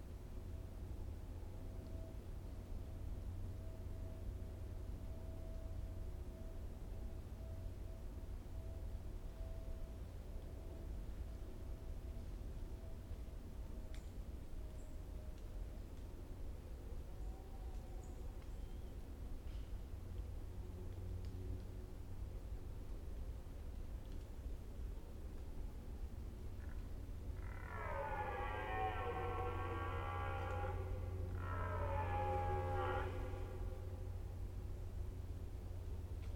Locquignol, France - Brame du cerf à 7h
En Forêt de Mormal, arrivé un peu tard pour avoir également le cri des chouettes en proximité, ce brame du cerf nous est offert avec le son du clocher en lointain.
Sonosax SXM2D2 DPA 4021 dans Albert ORTF sur iPhone